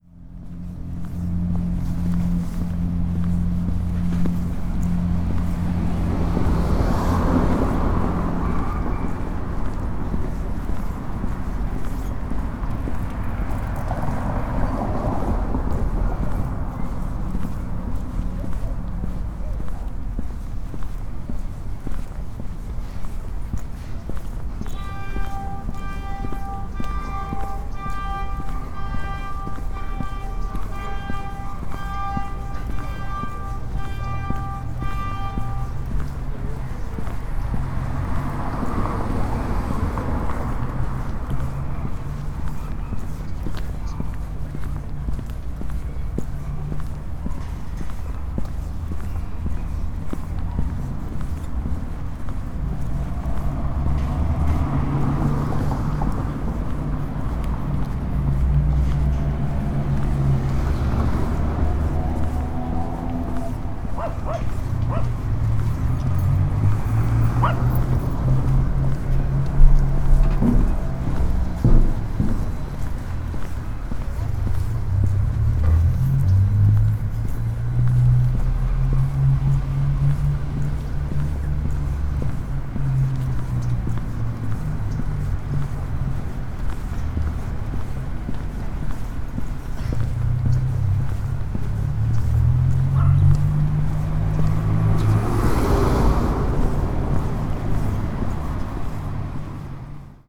Av. Panorama, Valle del Campestre, León, Gto., Mexico - Caminando por la acera del parque panorama.
Walking down the park sidewalk panorama.
I made this recording on February 27, 2020, at 6:53 p.m.
I used a Tascam DR-05X with its built-in microphones and a Tascam WS-11 windshield.
Original Recording:
Type: Stereo
Esta grabación la hice el 27 de febrero 2020 a las 18:53 horas.
27 February 2020, 18:53